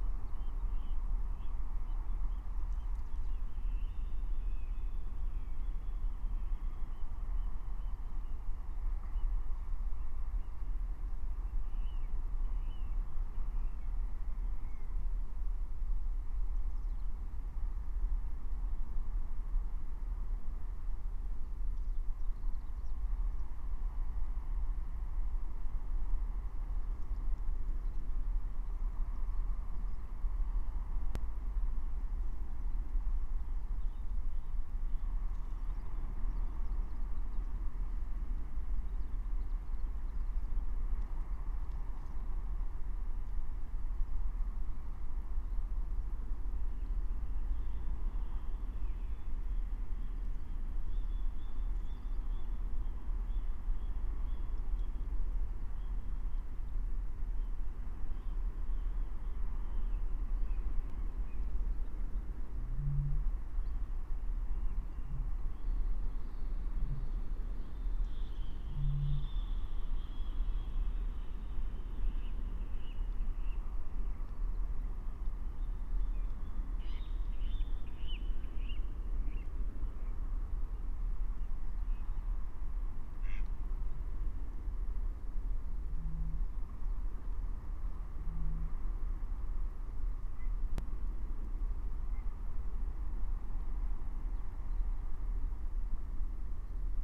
19:20 Walberswick, Halesworth, Suffolk Coastal Area - wetland ambience
England, United Kingdom, 23 March 2022